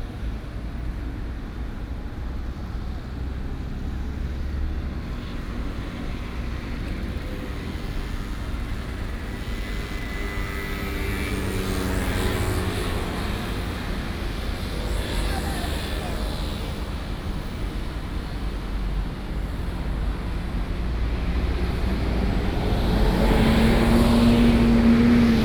Gongyuan Rd., Zhongzheng Dist., Taipei City - Traffic Sound
In the park entrance, Traffic Sound
18 August, 17:13